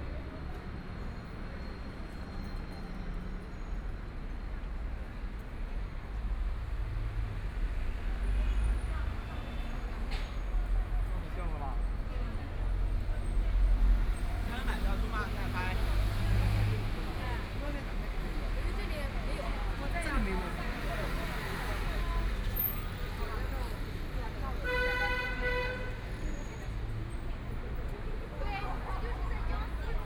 Sichuan Road, Shanghai - walking in the Street
walking in the Street, Binaural recordings, Zoom H6+ Soundman OKM II
Huangpu, Shanghai, China, 2 December